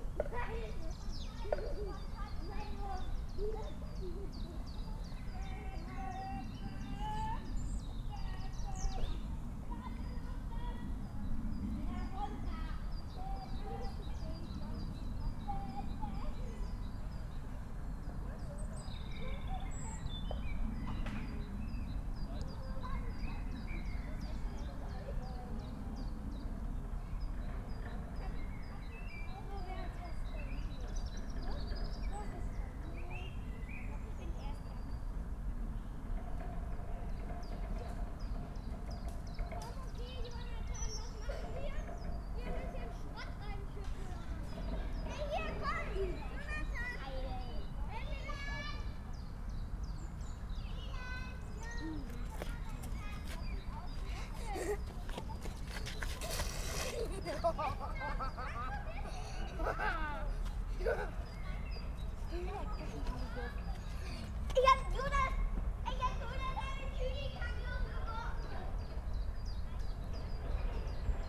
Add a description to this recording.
The change between clearing areas and young wood stands, between light and shade, characterises the image of the nature experience area Moorwiese (slightly more than 5,000 m²). This pilot area was created in close cooperation with the Pankow Youth Welfare Office and the Spielkultur Berlin-Buch association. It is located in the immediate vicinity of the Buch S-Bahn station, adjacent to an adventure playground and offers families and children variety in the direct vicinity of the large housing estates. Together with the adjacent open landscape and in the vicinity of the adventure playground, this nature experience area has a special attraction for children. The Moorwiese nature experience area was opened in September 2016. (Sony PCM D50, DPA4060)